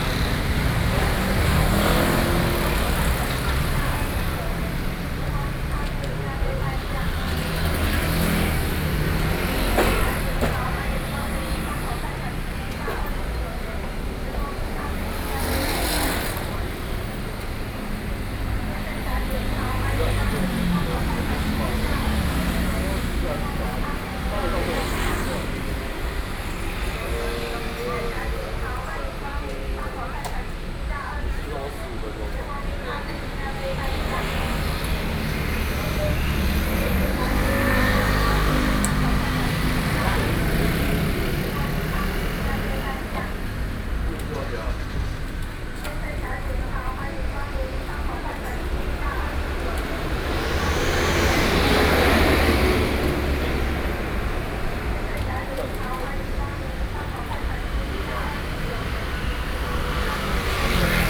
Taoyuan - Traffic Noise
In front of the entrance convenience stores, Sony PCM D50 + Soundman OKM II
Taoyuan City, Taoyuan County, Taiwan